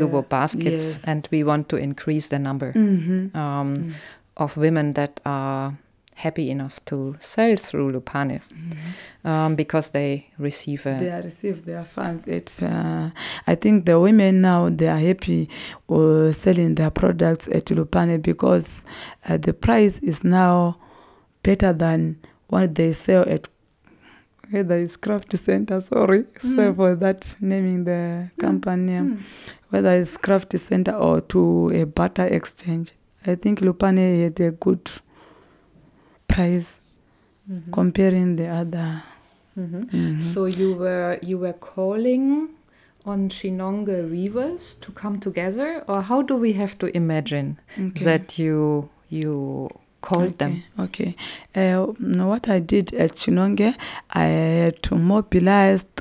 Office Zubo Trust, Binga, Zimbabwe - Donor sharing secrets of ilala basket weaving
Donor and I are diving into some of the secrets of ilala weaving. i’m fascinated to understand more about the actual making of ilala baskets and the intricate knowledge on how best to treat the natural resource of ilala (palm leave) to ready it for producing “good quality crafts”. The occasion for this interview recording with Donor Ncube was her organising, participating in and documenting the ilala weavers workshop in Chinonge. Donor is ilala crafts and financial assistance officer at Zubo Trust.
Matabeleland North, Zimbabwe, 27 September